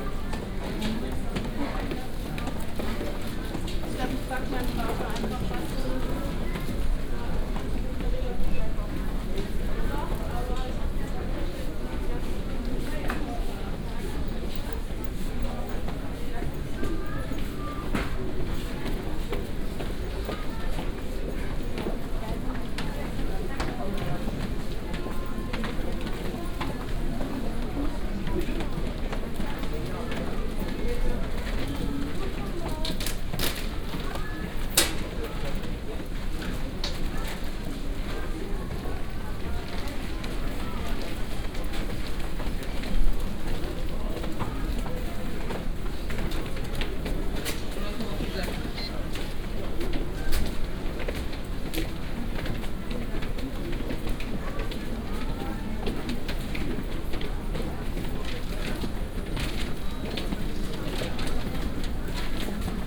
Berlin, Friedrichstr., bookstore - crowded bookstore
same procedure as every year. beeps and murmer and steps ons stairs, christmas bookstore ambience
23 December 2013, ~15:00